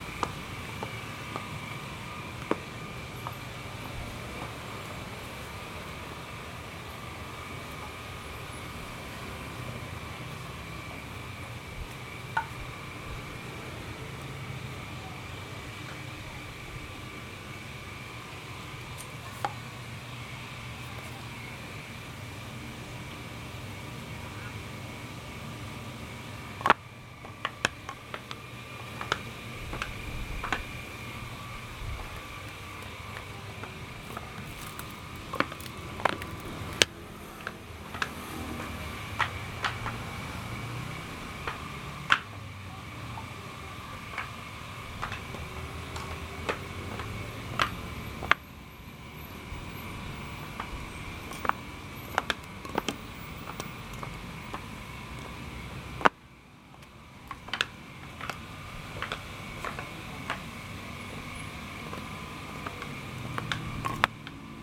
Tainan century-old wooden clogs store府城木屐百年老店 - Walking with wooden clogs
Customer walking with wooden clogs. 木屐踩踏聲